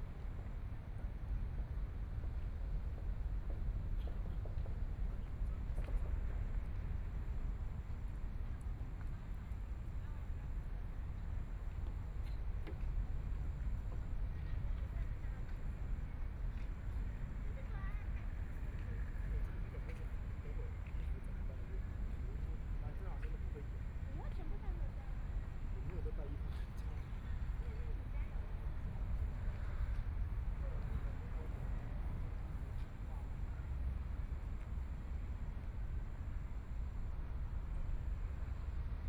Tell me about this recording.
Sitting on the beach, Sound of the waves, People walking, Near the temple of sound, The distant sound of fireworks, Binaural recordings, Zoom H4n+ Soundman OKM II ( SoundMap2014016 -25)